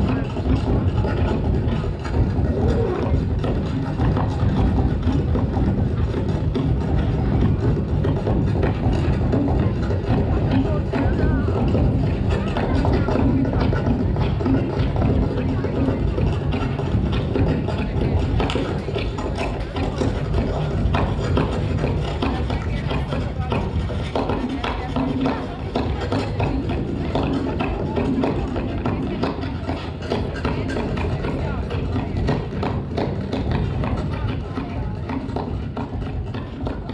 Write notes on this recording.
At the street during the Cluj City Festival Cilele 2014. The sound of the french performance group - scena urbana - point of view. /276204512560657/?ref=22, international city scapes - field recordings and social ambiences